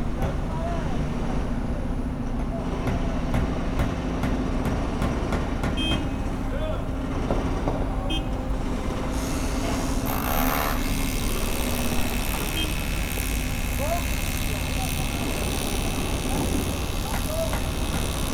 Centre, Ottignies-Louvain-la-Neuve, Belgique - Construction works
Huge construction works, with a lot of cranes and something like 40 workers.